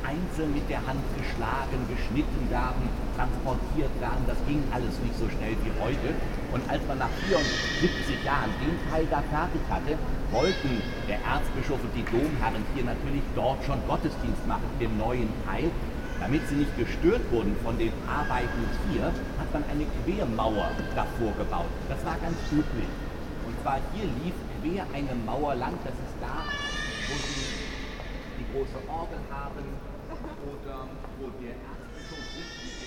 cologne, dom cathedral, tourist guide
inside the cathedral - a tourist guide explaning historical details of the church
soundmap nrw - social ambiences and topographic field recordings
Deutschland, European Union, 23 April 2010